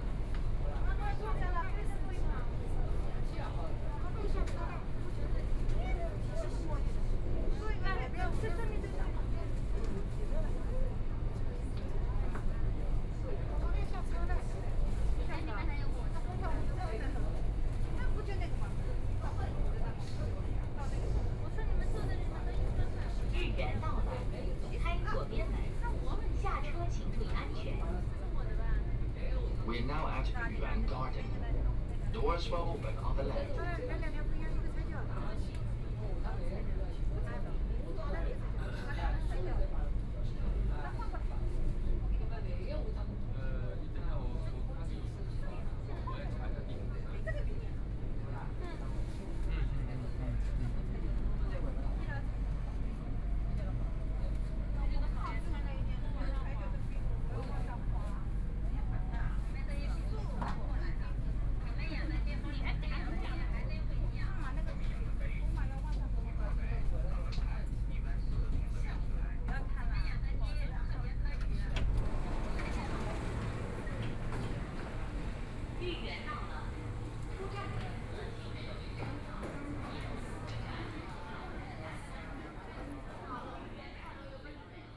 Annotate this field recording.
from East Nanjing Road Station to Yuyuan Garden Station, Binaural recording, Zoom H6+ Soundman OKM II